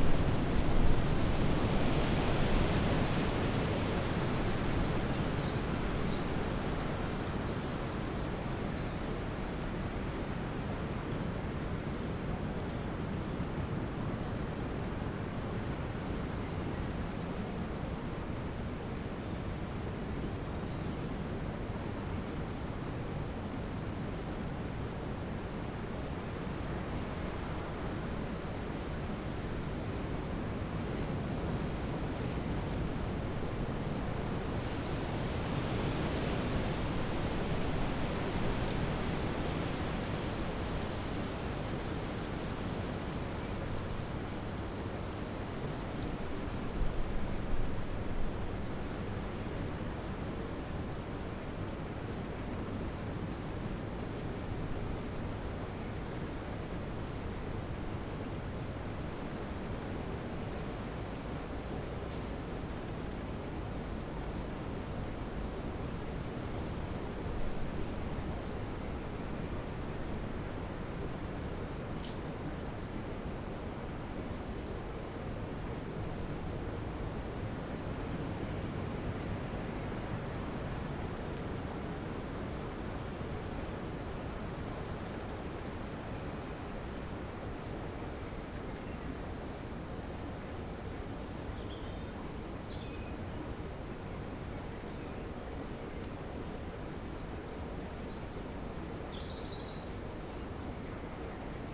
Vallée des Traouiero, Trégastel, France - Wind in the trees leaves [Valley Traouïero]
Le vent passant dans les arbres de la vallée. près du vielle arbre bizarre.
The wind passing through the trees of the valley. near the weird old tree.
April 2019.
Bretagne, France métropolitaine, France, 22 April